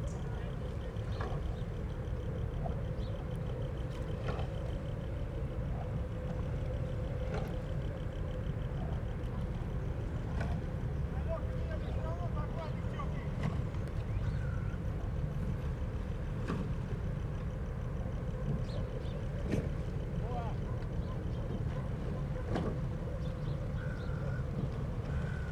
Berlin, Treptower Park, river Spree - rowing regatta training
Treptower Park, at the river Spree, training for a rowing regatta, boats passing-by back and forth, river side ambience
(SD702, DPA4060)